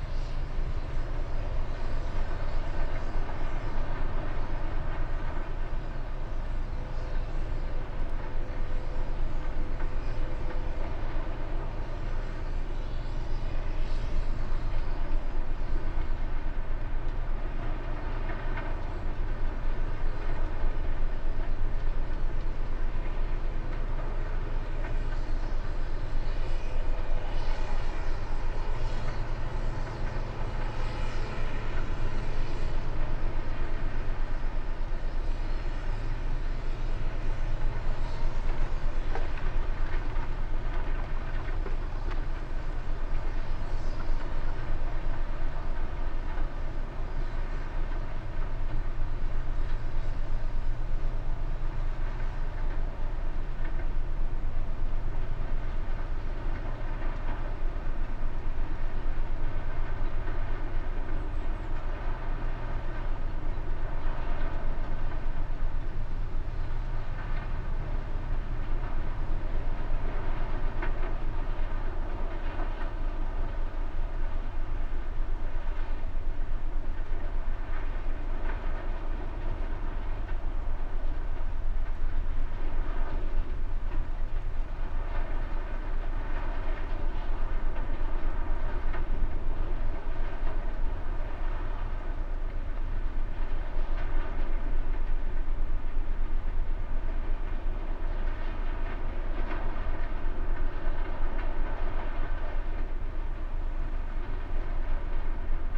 Steinbruch Steeden, Deutschland - lime stone quarry ambience
lime stone quarry ambience
(Sony PCM D50, Primo EM272)